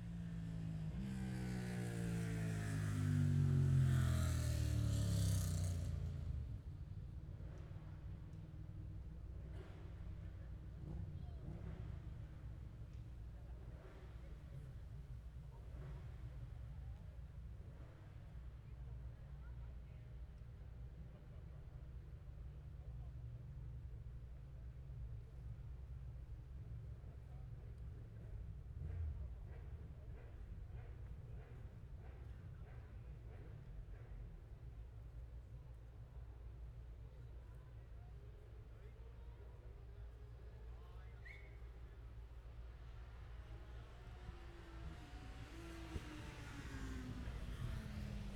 Scarborough District, UK - Motorcycle Road Racing 2016 ... Gold Cup ...
Lightweight up to 400 cc practice ... Mere Hairpin ... Oliver's Mount ... Scarborough ... open lavalier mics clipped to baseball cap ...